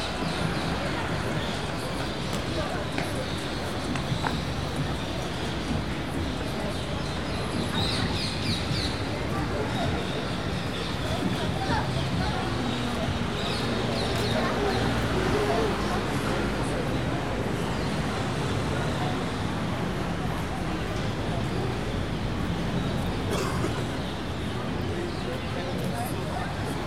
{"title": "Jeanne d'Arc, Toulouse, France - Bird City", "date": "2021-11-20 17:23:00", "description": "Bird, City, Trafic, Car, Road, People Talk\ncaptation : Zoom h4n", "latitude": "43.61", "longitude": "1.45", "altitude": "151", "timezone": "Europe/Paris"}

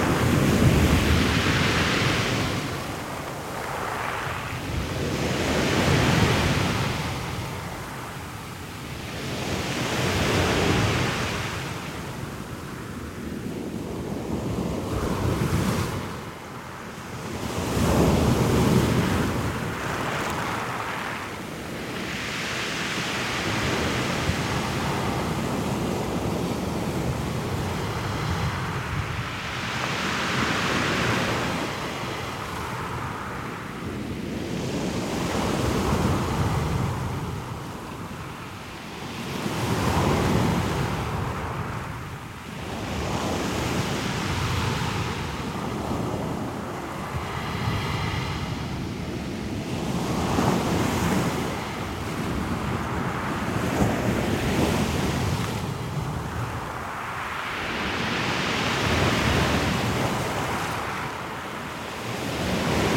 {"title": "Orford Ness National Trust nature reserve, Suffolk. - Orford Ness Lighthouse shingle beach", "date": "2016-01-31 14:28:00", "description": "Waves on shingle beach infront of Orford Ness Lighthouse. DPA 4060 pair (30cm spacing) / Sound Devices 702", "latitude": "52.08", "longitude": "1.57", "altitude": "3", "timezone": "Europe/London"}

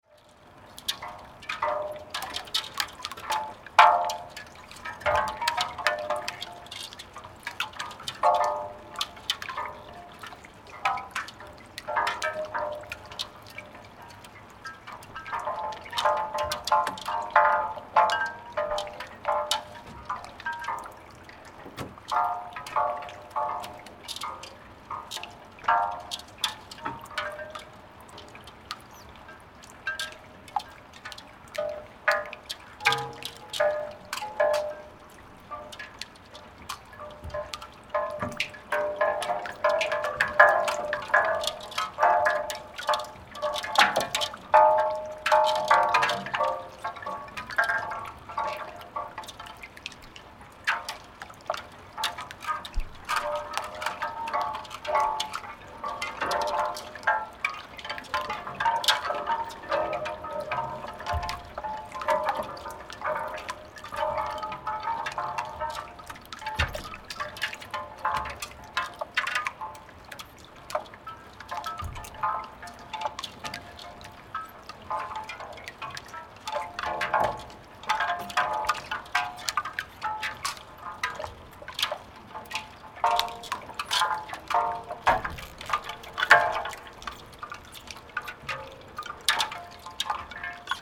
{"title": "Spree, Treptower Park - Pieces of ice on the Spree river", "date": "2017-01-30 16:00:00", "description": "Ice smelting on the Spree River, close to the boats, twisting each others...\nRecorded by a MS Setup Schoeps CCM41+CCM8 and a 633SD Recorder", "latitude": "52.49", "longitude": "13.47", "altitude": "34", "timezone": "GMT+1"}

